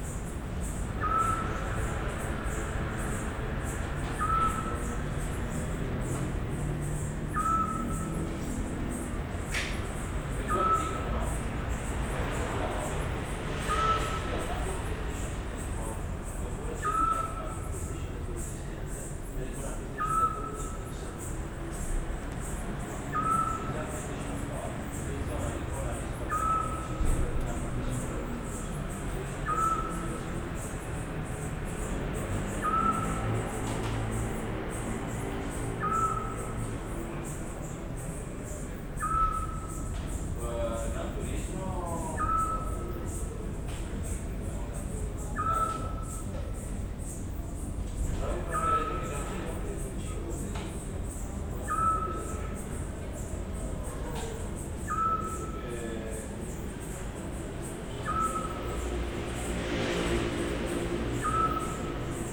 Via De Fin, Trieste, Italy - night ambience, owl
night ambience
(SD702, AT BP4025)
7 September